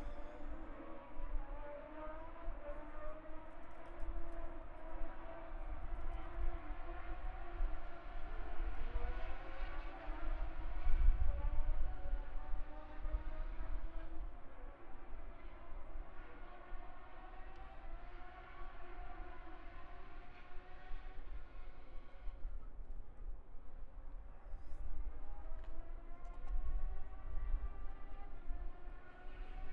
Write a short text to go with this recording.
british motorcycle grand prix 2022 ... moto two free practice one ... zoom h4n pro integral mics ... on mini tripod ...